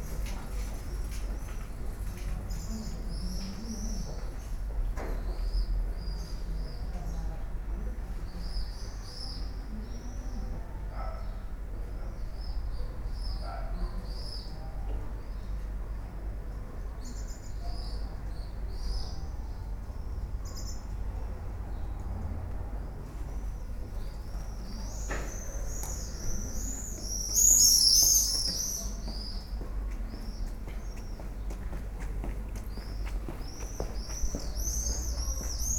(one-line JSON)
{"title": "a May evening", "description": "voices, a bicycle, swollows...", "latitude": "42.85", "longitude": "13.58", "altitude": "164", "timezone": "Europe/Berlin"}